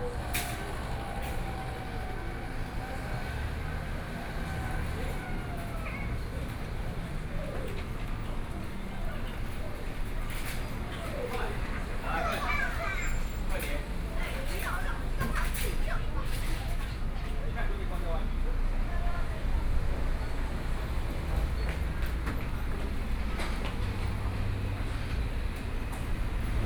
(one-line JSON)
{"title": "Guangfu Rd., Yuli Township - In front of the supermarket", "date": "2014-09-07 16:05:00", "description": "In front of the supermarket, Traffic Sound", "latitude": "23.33", "longitude": "121.32", "altitude": "137", "timezone": "Asia/Taipei"}